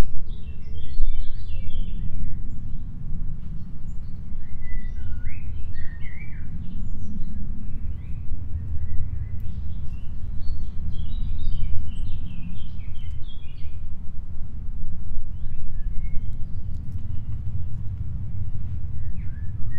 Bruxelles, Cimetière du Dieweg / Brussels, Dieweg cemetary / World listening day : World listening day. A few birds, rather quiet in this abandonnes-d cemeary.